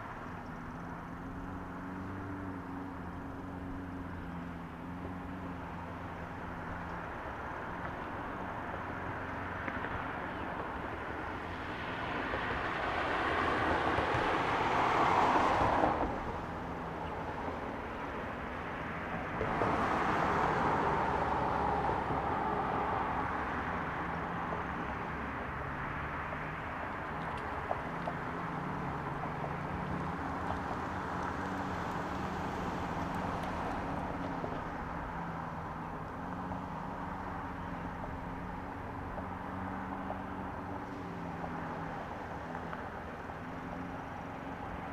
Levee Floodgate, Valley Park, Missouri, USA - Floodgate
Recording from bridge of cars passing through floodgate of Valley Park Meramec Levee. Someone is mowing their lawn.